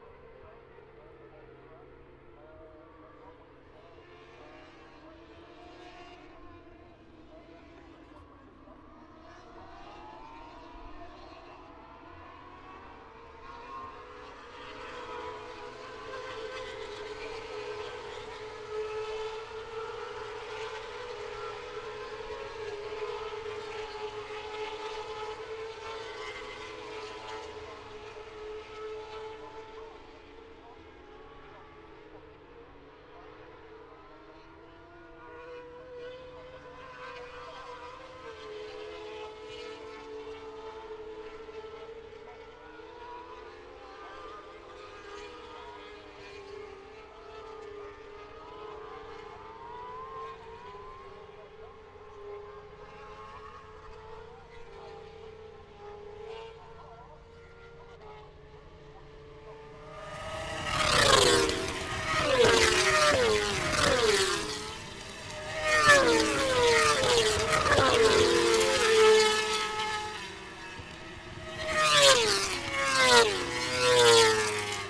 Silverstone, UK - british motorcycle grand prix 2016 ... moto two ...
moto two free practice two ... Maggotts ... Silverstone ... open lavalier mics on T bar strapped to sandwich box on collapsible chair ... windy grey afternoon ... rain stopped play ...